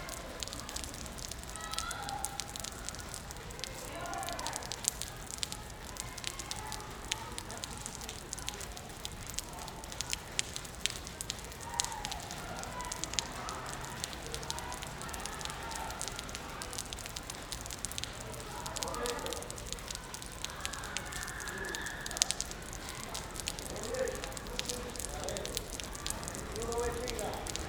Woodward Ave, Ridgewood, NY, USA - Water dripping from the M train platform
Sounds of water dripping on the road from the elevated M train station (Forest Ave).
In a twisted turn of events, a car parks under the stream of water, changing the sound of the dripping water.